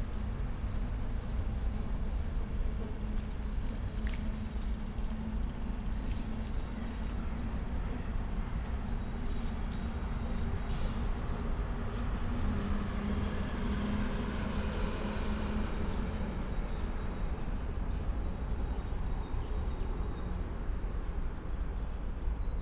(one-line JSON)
{"title": "Nordufer, Berlin - dead end street, train passing-by", "date": "2017-03-11 14:45:00", "description": "on a bench at nordufer, listening to city hum, distant sounds and a train passing-by on the bridge above\n(SD702, DPA4060)", "latitude": "52.54", "longitude": "13.36", "altitude": "38", "timezone": "Europe/Berlin"}